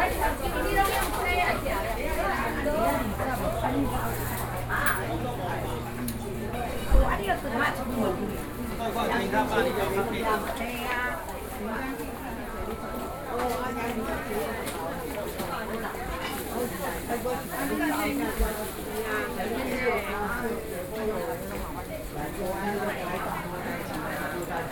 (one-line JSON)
{"title": "Sanshui St., Wanhua Dist., Taipei City - Traditional markets", "date": "2012-11-03 09:11:00", "latitude": "25.04", "longitude": "121.50", "altitude": "13", "timezone": "Asia/Taipei"}